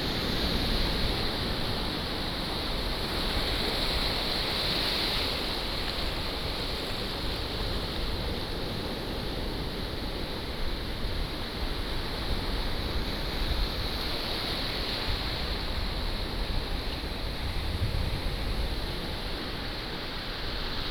宜灣, Taitung County - sound of the waves
sound of the waves
Taitung County, Taiwan, 8 September 2014